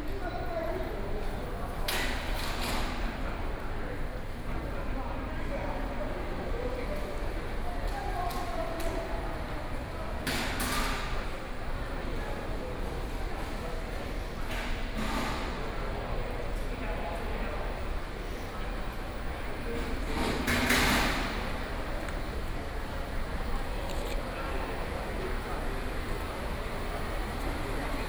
Taoyuan International Airport, Taiwan - At the airport hall
At the airport hall